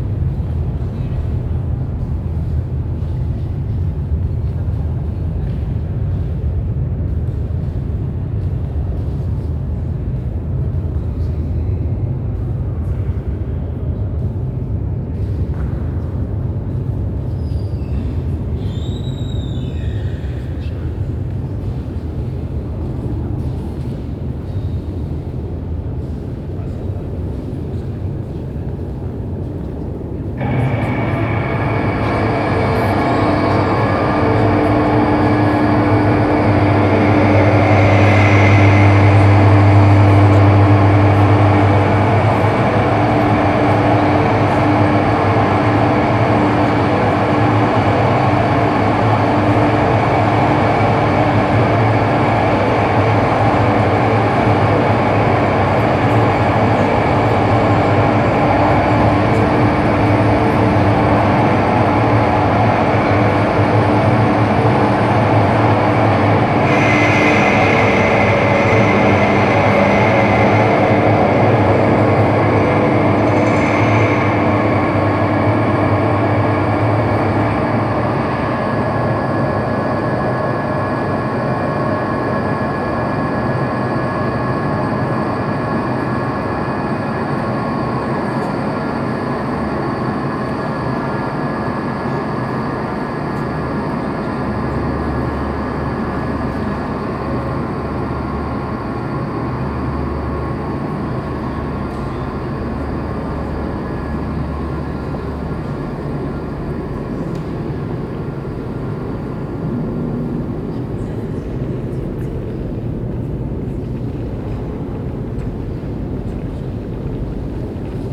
Stoppenberg, Essen, Deutschland - essen, zollverein, schacht XII, halle 8, sound installation
At Zeche Zollverein in Hall 8. The sound of a temporary sound installation by sound artist Christine Kubisch plus steps and voices of visitors during the opening. The title of the work is" Unter Grund". The sound room is composed out of recordings of the 1000 m underground constantly working water pump system underneath the mine areal.
The work has been presented during the festival"Now"
soundmap nrw - topographic field recordings, social ambiences and art places
Essen, Germany, November 2014